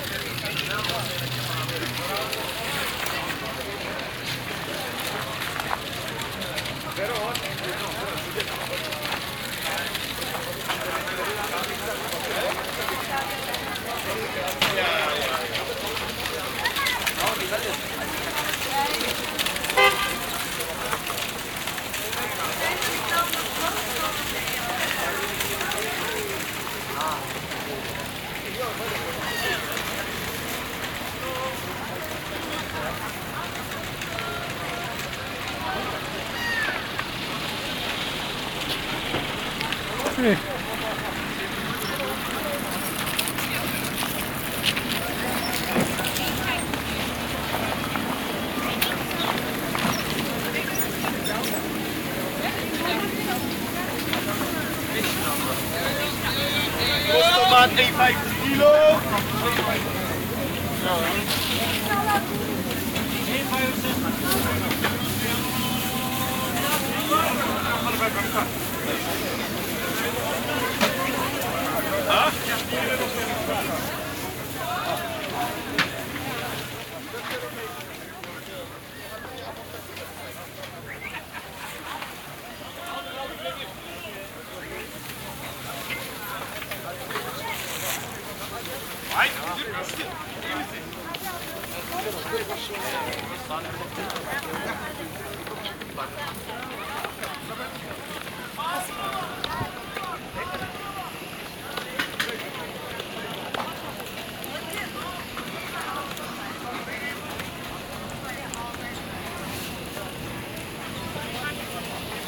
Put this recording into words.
Recorded in winter during the saturdays regular market. Vendors, seagulls, cars, snow, plastic bags